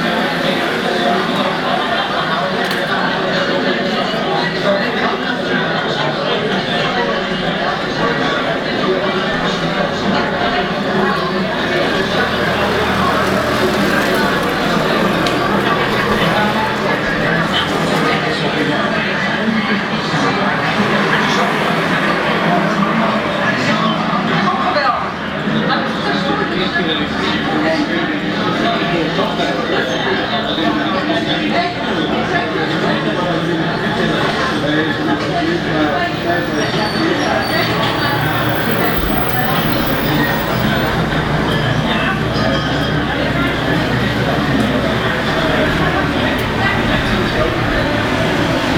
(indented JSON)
{
  "title": "Lázně, Bus Stop",
  "date": "2011-05-18 10:24:00",
  "description": "Its site-specific sound instalation. Sounds of energic big cities inside bus stops and phone booths in small town.\nOriginal sound record of Barcelona by",
  "latitude": "49.47",
  "longitude": "17.11",
  "altitude": "228",
  "timezone": "Europe/Prague"
}